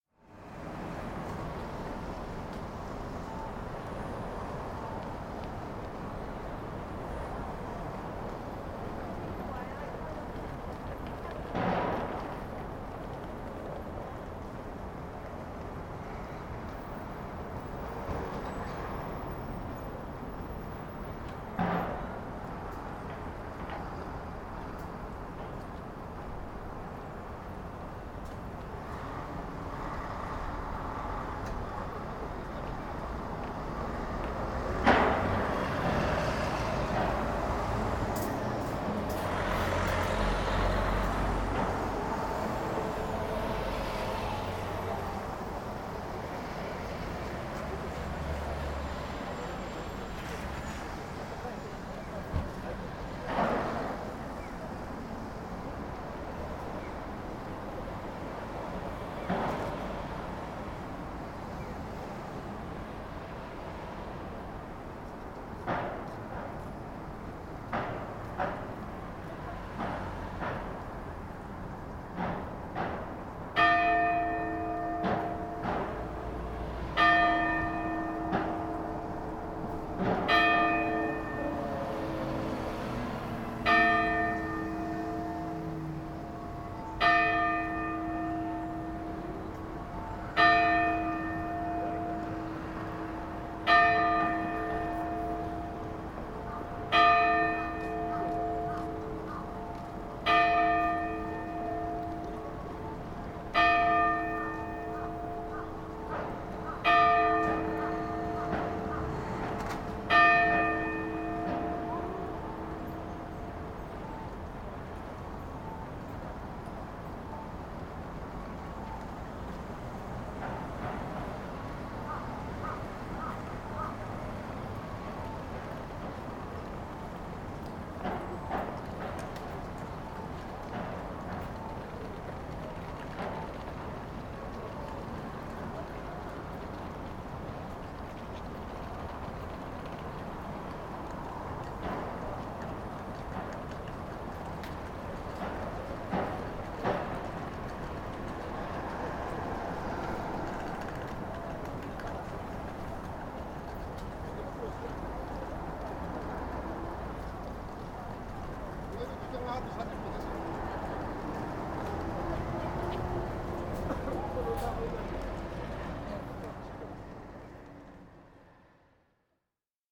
Chome Kita 1 Jōnishi, Chūō-ku, Sapporo-shi, Hokkaidō, Japan - Sapporo Clock Tower strikes 12...
This US-style clock tower was installed in 1878; Sapporo was a city built with the help of the American government and this is the oldest building there.